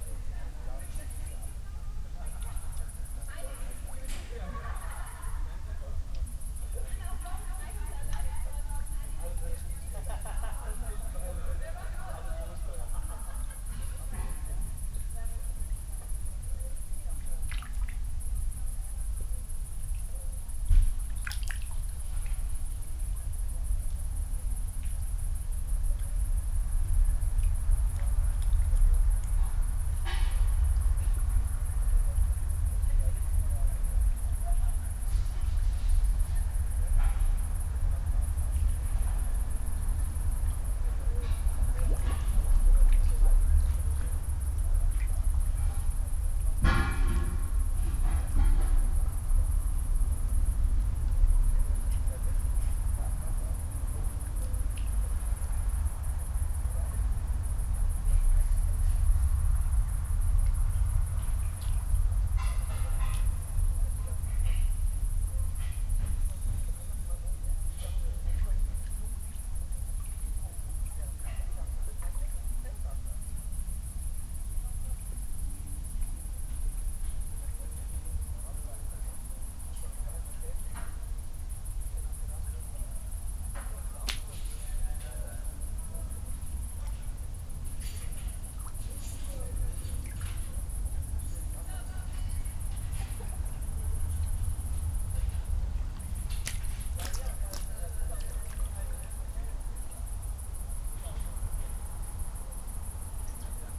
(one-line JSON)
{"title": "Bredereiche, Fürstenberg/Havel, Deutschland - midnight at the river Havel", "date": "2019-07-12 23:55:00", "description": "fish are still jumping, another distant party is going on, cars still rumble over coblestones\n(Sony PCM D50, Primo EM172)", "latitude": "53.14", "longitude": "13.24", "altitude": "53", "timezone": "Europe/Berlin"}